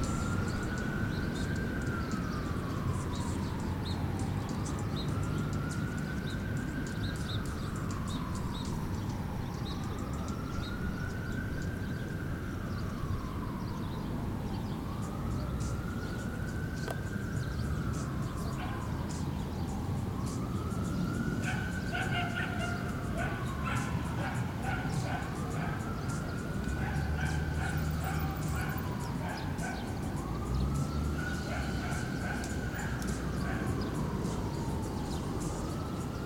Saint Nicholas Park, Harlem, Street, New York, NY, USA - Saint Nicholas Park Ambience
Ambient sounds in Saint Nicholas Park, Harlem, NYC. Dogs barking, distant conversation, ambulance siren, birds singing, planes flying by, car horns honking, and a passing bicycle rider walking their bike. Partly sunny, light wind, ~55 degrees F. Tascam Portacapture X8, A-B internal mics facing north, Gutmann windscreen, Ulanzi MT-47 tripod. Normalized to -23 LUFS using DaVinci Resolve Fairlight.